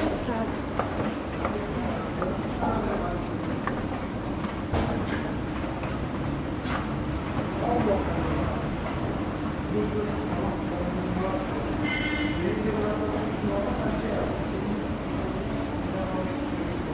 Levent metro station, a week of transit, monday morning - Levent metro station, a week of transit, friday morning
She is there to remind you of the unchangeable pace of the organism of Istanbul. In the metropolitan underground, what surprise would you expect? I decide not to take the metro in the afternoon, I will walk home, get lost and listen elsewhere instead.
Istanbul Province/Istanbul, Turkey